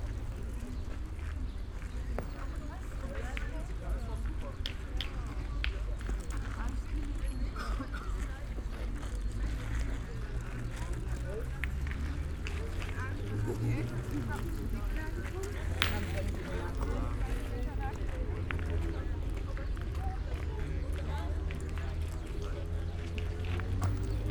Paul-Linke-Ufer, Kreuzberg, Berlin - boule player
boule player's place at Paul-Linke-Ufer, alongside Landwehrkanal. it's the first spring day, sunny and warm, everybody seems to be out.
(geek note: SD702 DAP4060 binaural)